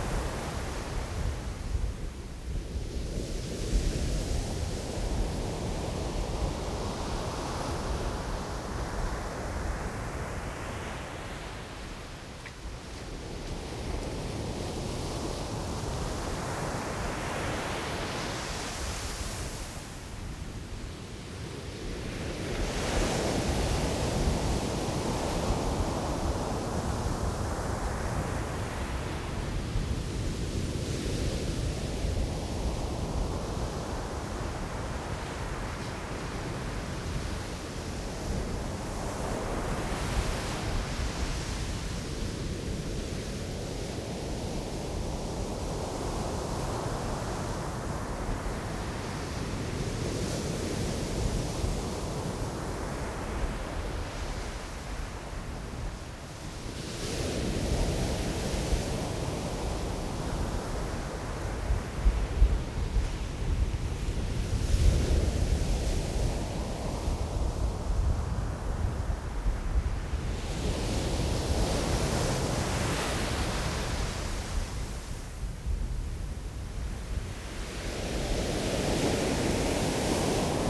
Zhiben, Taiwan - Seaside
Sound of the waves, Sandy beach, Seaside, Zoom H6 M/S
17 January 2014, 13:52